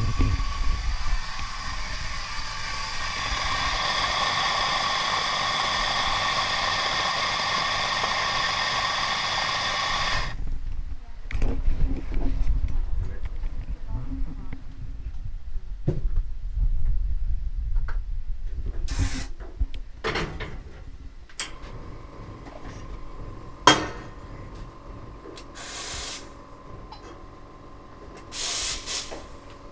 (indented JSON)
{
  "title": "Low Ml, Langwathby, Penrith, UK - Saddleback's Coffee Van Preparing a Coffee",
  "date": "2021-11-09 14:04:00",
  "description": "Making a coffee at Saddleback's Coffee Van. The clanging of metal cups, milk being steamed and the coffee machine.",
  "latitude": "54.70",
  "longitude": "-2.67",
  "altitude": "100",
  "timezone": "Europe/London"
}